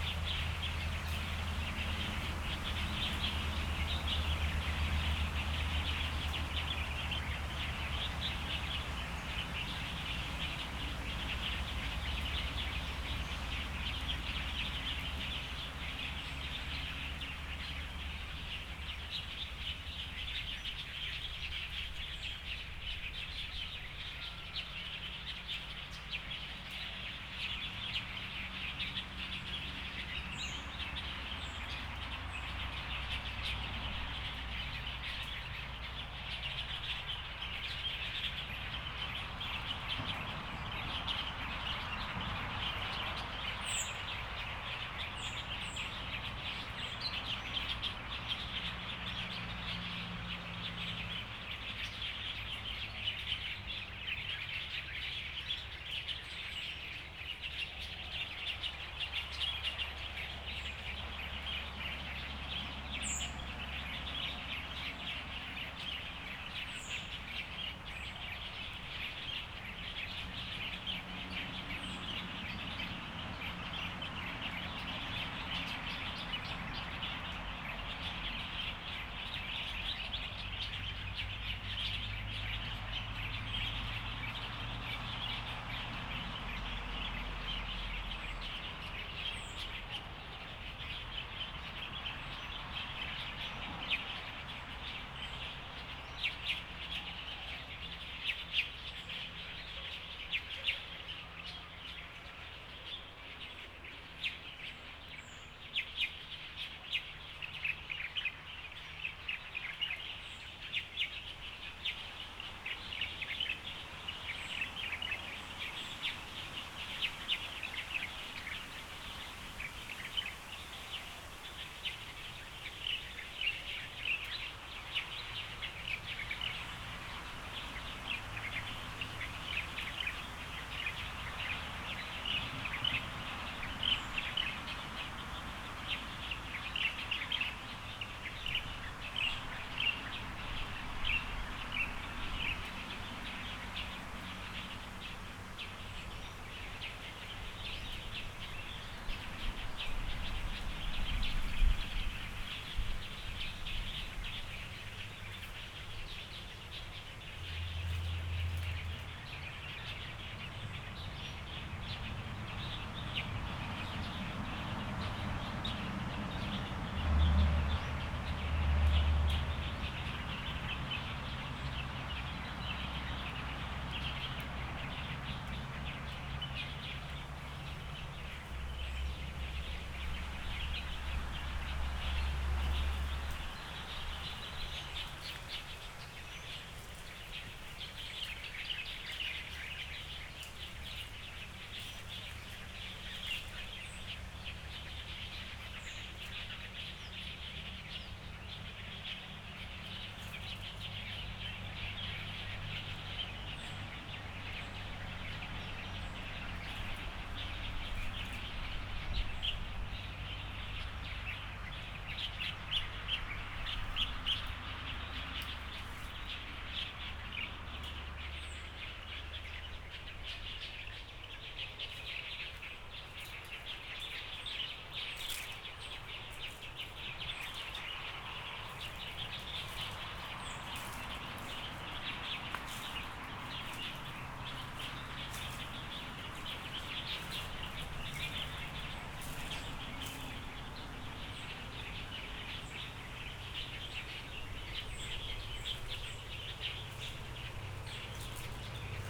Binlang Rd., Beinan Township - in the woods
Stepping on leaves, Birds singing, Traffic Sound, Zoom H6 M/S